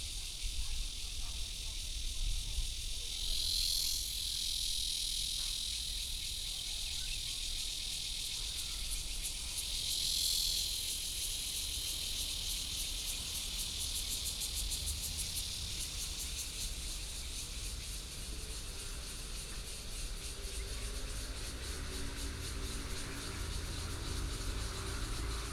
楊梅市富岡里, Taoyuan County - Abandoned factory
in theAbandoned factory, Birdsong sound, Cicadas sound, Traffic Sound, Far from the Trains traveling through